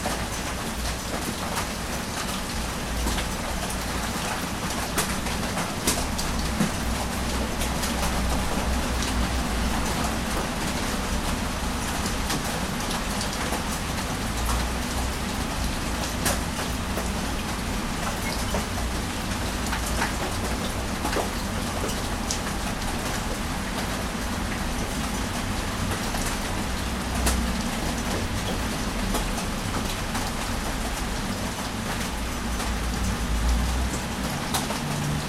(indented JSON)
{
  "title": "Morning Rain - Arbour Hill, Dublin - Morning Rain",
  "date": "2012-07-16 08:30:00",
  "description": "Morning summer rain recorded through a window opening onto small yard - July 2012, for World Listening Day",
  "latitude": "53.35",
  "longitude": "-6.28",
  "altitude": "13",
  "timezone": "Europe/Dublin"
}